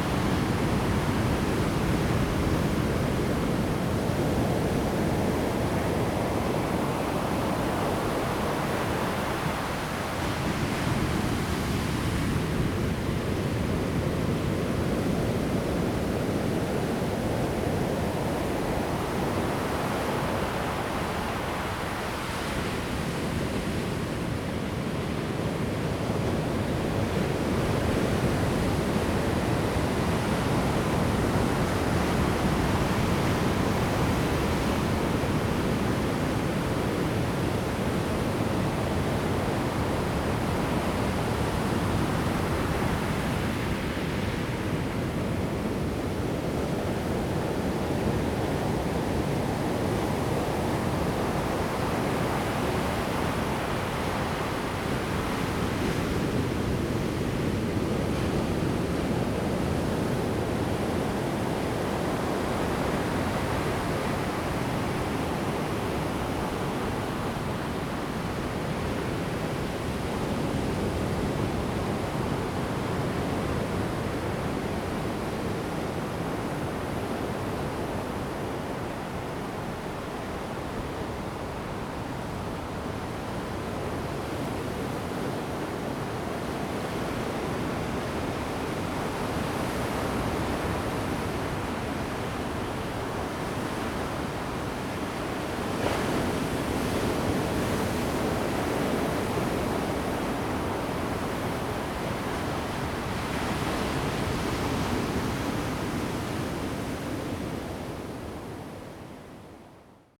牡丹灣, Mudan Township - In the bay
In the bay, Sound of the waves
Zoom H2n MS+XY